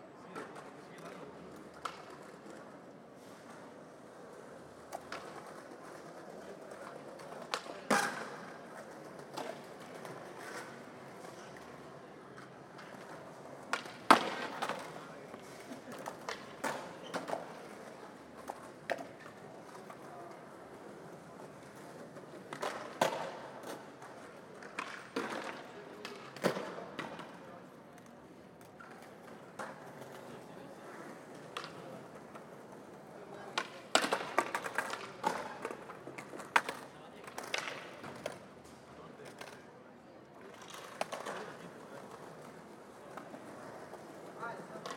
Carrer de Joaquín Costa, Barcelona, Espagne - Barcelone - MACBA -skaters
Barcelone - MACBA (Musée d'Art Contemporain de Barcelone)
L'esplanade du musée est un paradis (ou un enfer son leur niveau) des skaters espagnols.
Ambiance fin de journée.
ZOOM F3 + AKG 451B
Catalunya, España, July 2022